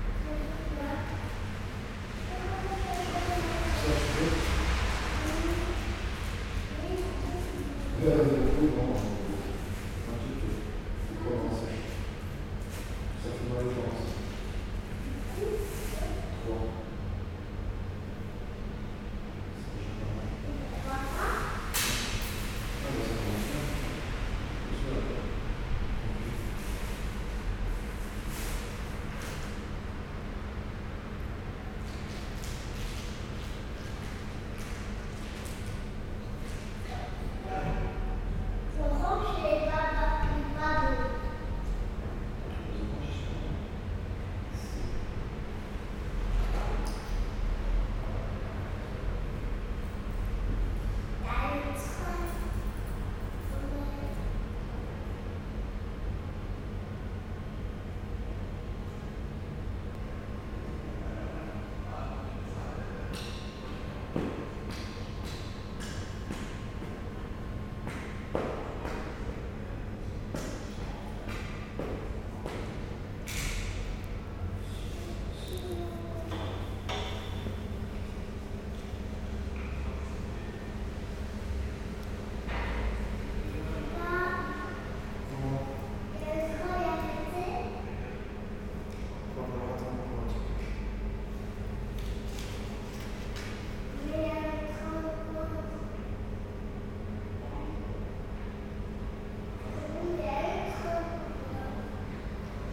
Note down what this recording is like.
Dinant is a small beautiful very touristic place. But, also, its a dead town, a dead zone, and the railway station is a fucking dead end station. Trains are rare, people look depressed, turnkey is rude, its raining since early on the morning. Are we in a rat hole ? In this recording, nothings happening. People wait, no train comes, noisy tourists arrive, a freight train passes. Everything look like boring, oh what a sad place...